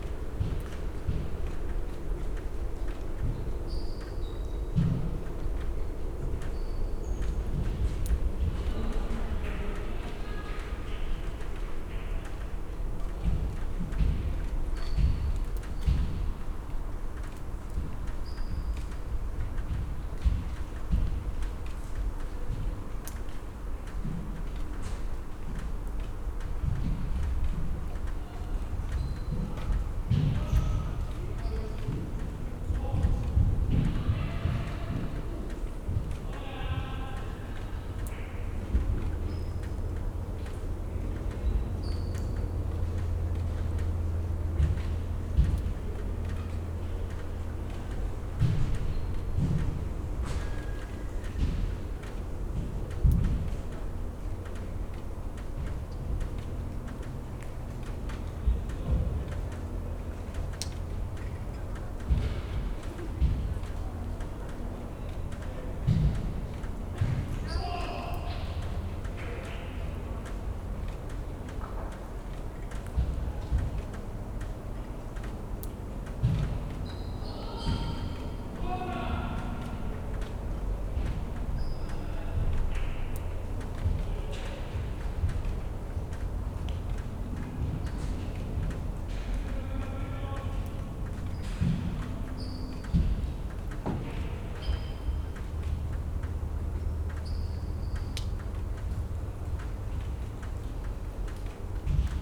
Ziherlova ulica, Ljubljana - gymnasium, night ambience
in front of the sports hall / gymnasium at Ziherlova ulica, late evening.
(Sony PCM D50, DPA4060)
Ljubljana, Slovenia, 5 November 2012